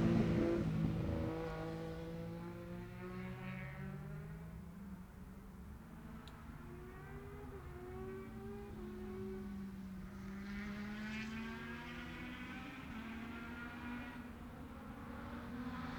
Scarborough UK - Scarborough Road Races 2017 ... 600s ...
Cock o' the North road races ... Oliver's Mount ... 600cc motorbike practice ...
24 June 2017, 09:15